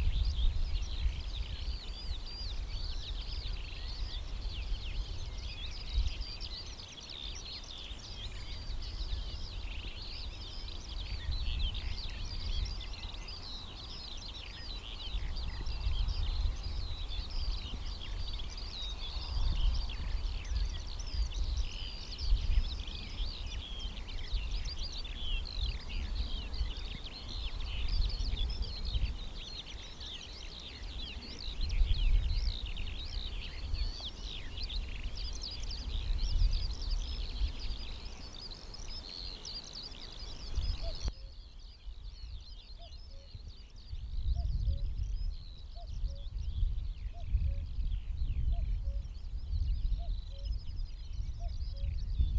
Drents-Friese Wold 3a - Silence#3a